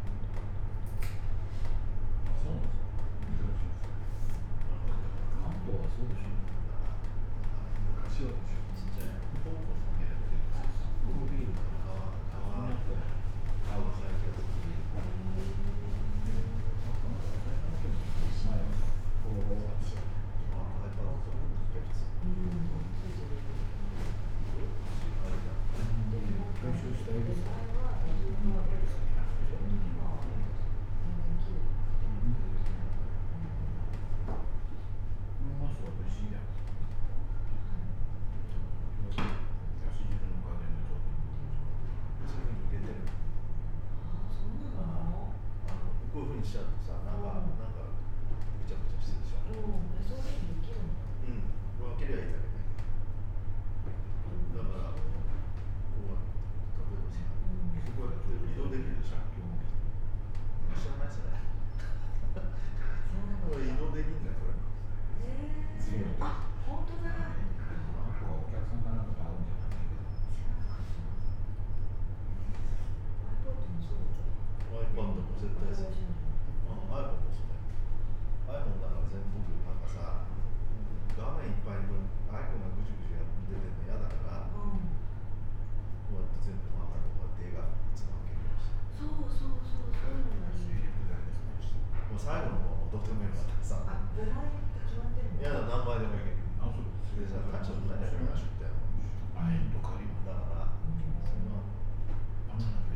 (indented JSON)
{
  "title": "koishikawa korakuen gardens, tokyo - enjoying afterwards",
  "date": "2013-11-13 17:05:00",
  "description": "wooden restaurant atmosphere with a kimono dressed lady",
  "latitude": "35.71",
  "longitude": "139.75",
  "altitude": "21",
  "timezone": "Asia/Tokyo"
}